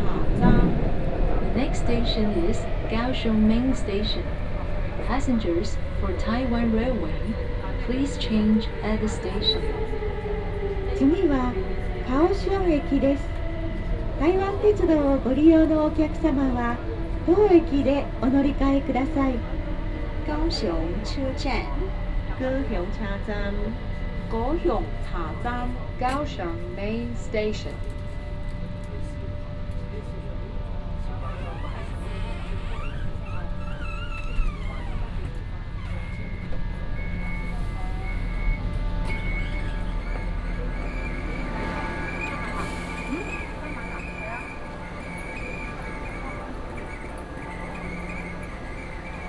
Sinsing, Kaohsiung - Kaohsiung MRT
to Kaohsiung Main Station
高雄市 (Kaohsiung City), 中華民國